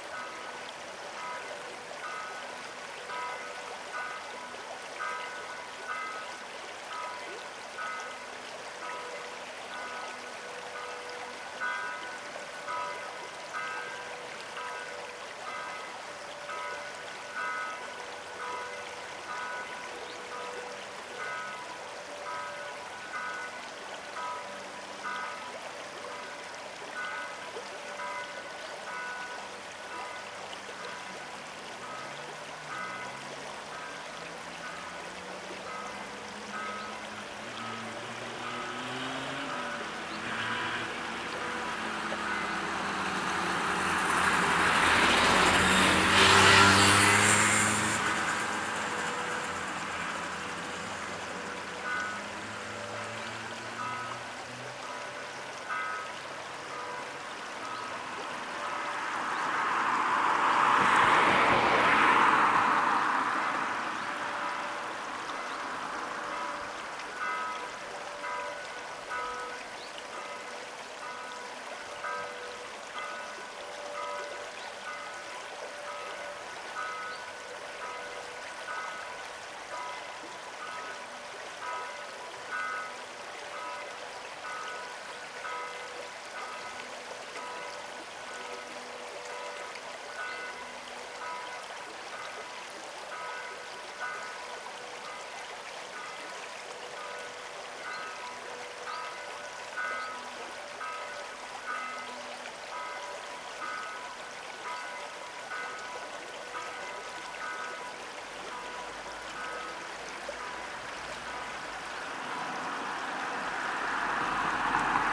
*Quasi-Binaural - best listening with headphones.
On the Ilm bridge of Bad Berka city, the river manifests its distictive baseline textures as Church bell joins in in the 49th second in the left channel. Occassional engines of cars run through the stereo space adding energy and dynamism to the soundscape.
Gear: MikroUsi Pro, my ear lobes and and ZOOM F4 Field Recorder.
Thüringen, Deutschland, September 2020